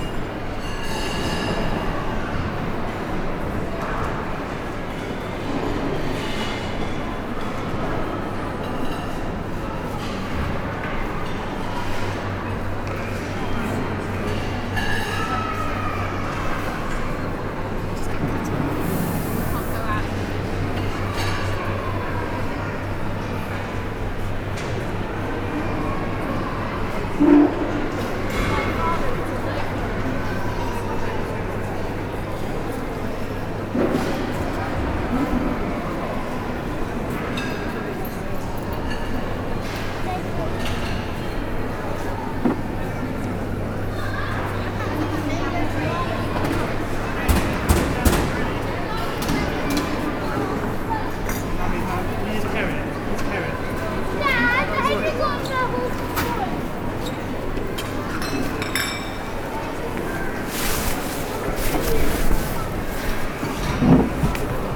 Interior Atmosphere - The V&A Museum of Childhood, Bethnal Green, London, UK
The wonderful reverberant interior spaces of the Childrens Museum. Recorded with a Mix Pre 6 II with 2 Sennheiser MKH 8020s
England, United Kingdom, 6 March 2020